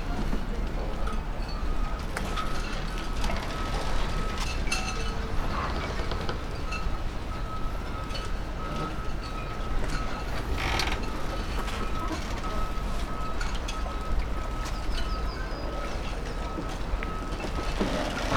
Funchal, Marina - boats resting
(bianaural) marina is packed with boats and yachts. the steel wires pat on the masts and the boats squeak and creack when they rub against the jetties. some activity in the restaurant in the marina. in the background very distinct sound of Funchal, roaring engines of old Volvo buses.
Funchal, Portugal, 9 May 2015